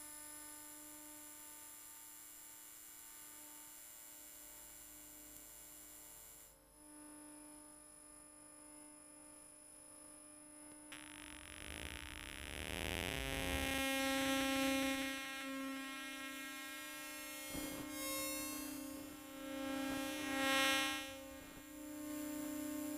City of Brussels, Belgium - Telephone pick-up recording of Metro ride, escalator, and ticket validation machine
Listening to the Metro from Port de Namur to Arts-Loi using a very cheap telephone pick-up coil, and therefore hearing only the electromagnetic waves along this trajectory. The beeps at the end are from when I put my ticket into the ticket validating machine. Mono recording, with telephone pick up coil plugged into EDIROL R09